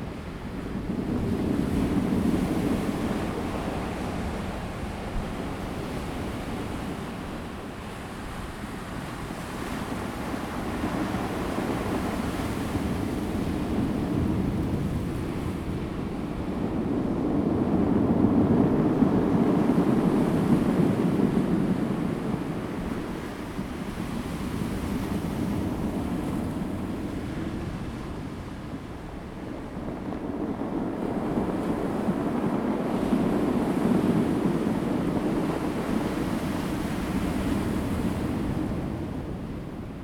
達仁溪橋, 南田 Daren Township - Close to the wave
Close to the wave, Rolling stones
Zoom H2n MS+XY